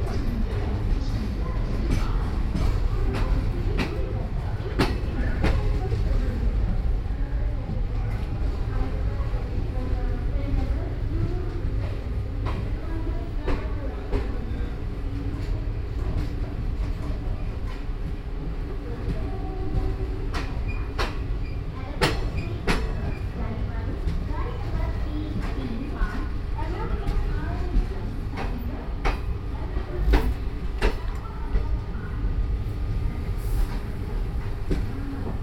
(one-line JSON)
{"title": "Eranakulam, Eranakulam town, arrival", "date": "2009-11-09 10:07:00", "description": "India, Kerala, Eranakulam, train, railway station", "latitude": "9.99", "longitude": "76.29", "altitude": "7", "timezone": "Asia/Kolkata"}